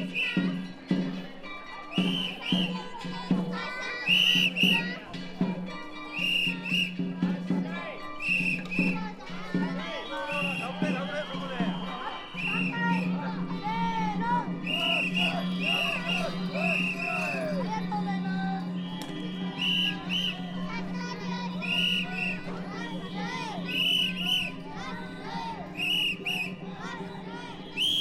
Bandamachi, Tagawa, Fukuoka, Japan - Tagawa River Crossing Festival
Descending the steps of the shrine to where the floats are assembled.
福岡県, 日本, 6 May 2018